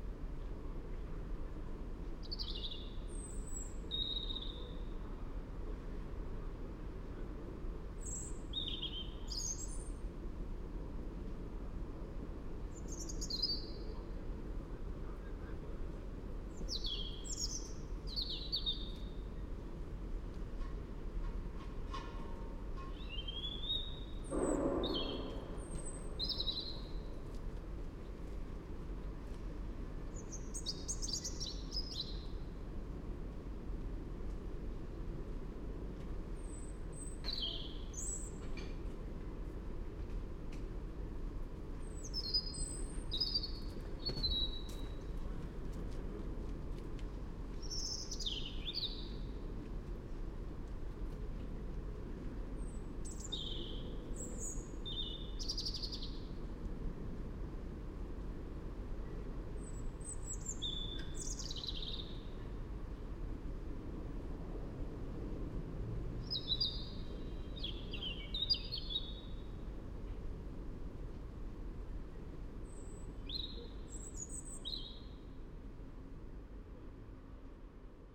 Millbank, Westminster, London, UK - A Robin in a tree.
I just acquired a parabolic reflector and wanted to try recording this Robin I hear everyday at work. It sits in the same tree without fail, every morning and evening. (and sometimes all day) Recorded into mixpre6 with Mikro-Usi
19 February 2019, 18:00